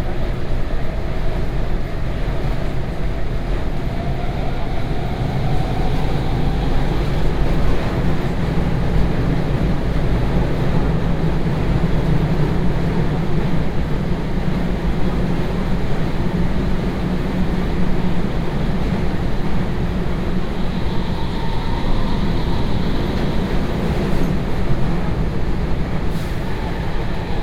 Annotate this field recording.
Binaural recording of ride from Neos-Kosmos to Acropoli with M2 line. Recorded with Soundman OKM + Sony D100